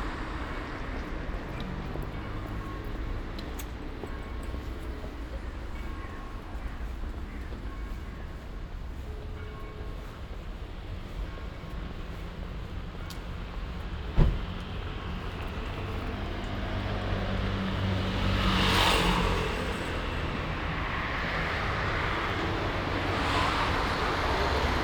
“Crunchy Saturday market with conversation in the time of covid19”: Soundwalk
Chapter CLXXXVII of Ascolto il tuo cuore, città. I listen to your heart, city.
Saturday, February 5th, 2022. Walk in the open-door square market at Piazza Madama Cristina, district of San Salvario, Turin, almost two years after the first emergency disposition due to the epidemic of COVID19.
Start at 11:56 a.m., end at h. 00:38 p.m. duration of recording 41’36”
The entire path is associated with a synchronized GPS track recorded in the (kml, gpx, kmz) files downloadable here:
Piemonte, Italia, 5 February 2022